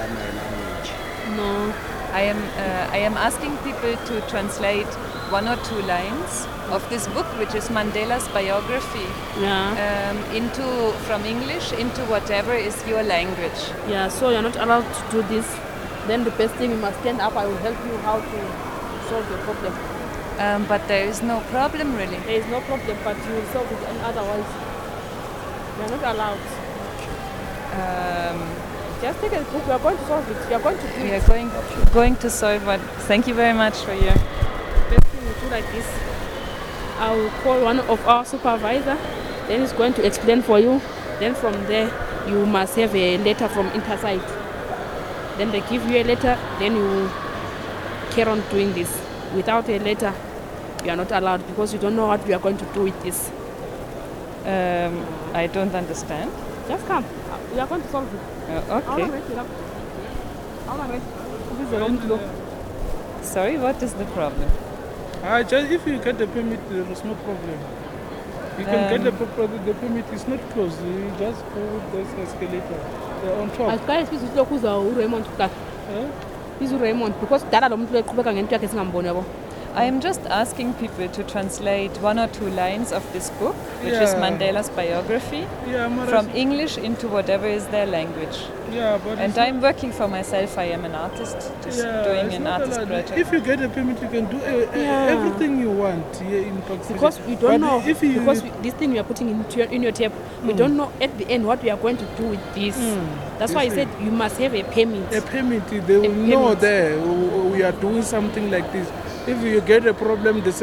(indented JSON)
{
  "title": "Park Station, Johannesburg, South Africa - you must have a permit...!",
  "date": "2006-03-07 12:16:00",
  "description": "For these recordings, I asked people in the inner city of Johannesburg, Park Station and Alexandra Township to read sentences from Nelson Mandela’s biography ‘Long Walk to Freedom’ (the abridged edition!) but translated on the spot into their own mother-tongues.\nThese are just a few clippings from the original recordings for what became the radio piece LONG WALK abridged.\nand these clippings of previously unreleased footage from the original recordings made on a borrowed mini-disc-recorder in Park Station Johannesburg…\nLONG WALK abridged was first broadcast across the Radia-Network of independent stations in January and February 2007.",
  "latitude": "-26.20",
  "longitude": "28.04",
  "altitude": "1751",
  "timezone": "Africa/Johannesburg"
}